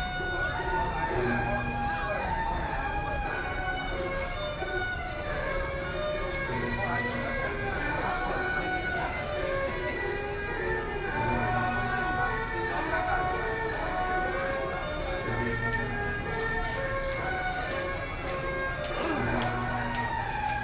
Buddhist Temple, Luzhou - Traditional temple Festival
The crowd, Standing in the square in front of the temple, Traditional temple Festival, Binaural recordings, Sony PCM D50 + Soundman OKM II
New Taipei City, Taiwan, 22 October 2013, ~18:00